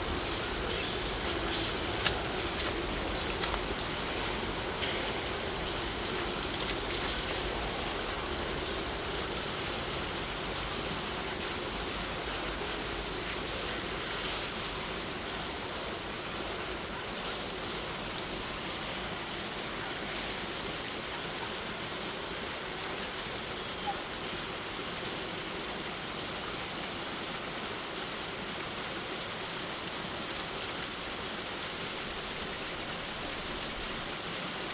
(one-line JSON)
{"title": "Vodopády v zahradě Kinských, Praha, Czechia - Vodárna Kinských", "date": "2022-02-04 17:09:00", "description": "Zvuk oknem vodárenské stavby, která je součástí petřínských pramenů.", "latitude": "50.08", "longitude": "14.40", "altitude": "249", "timezone": "Europe/Prague"}